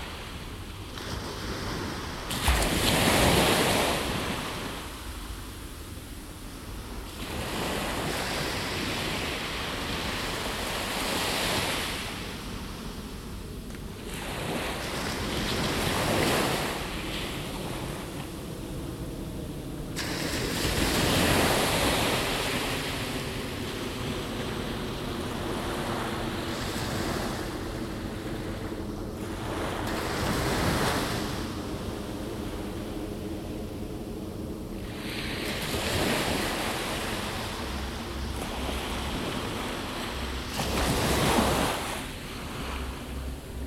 The waves breaking, probably one of the most recorded sounds. But I never actually made a proper recording of it. I accidentally drove past the beach when I realized there were no people and there was no wind. A good opportunity to make a recording. Recorded around 21:30hrs on March 5th, 2014.
Recorded with a Zoom H2 with additional Sound Professionals SP-TFB-2 binaural microphones.